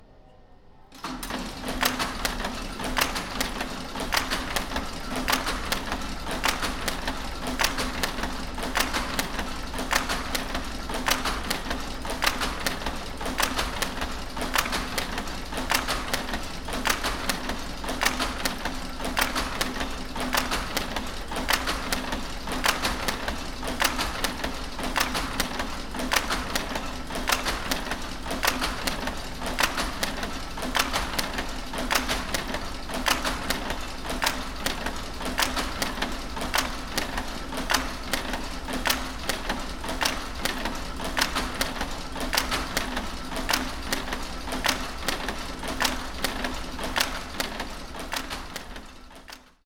Muzeum Fabryki Manufaktura, Łódź, Polska - Old weaving machine
The Museum of the Factory is a place where you can discover the history of textile factory founded by Izrael Poznański in mid 19th century. In the times of its past glory the factory produced million of metres of cotton material.
Łódź, Poland